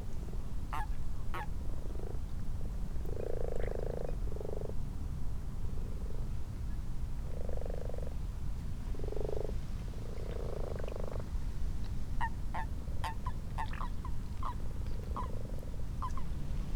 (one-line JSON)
{"title": "Malton, UK - frogs and toads ...", "date": "2022-03-12 21:52:00", "description": "common frogs and common toads ... xlr sass to zoom h5 ... time edited unattended extended recording ...", "latitude": "54.12", "longitude": "-0.54", "altitude": "77", "timezone": "Europe/London"}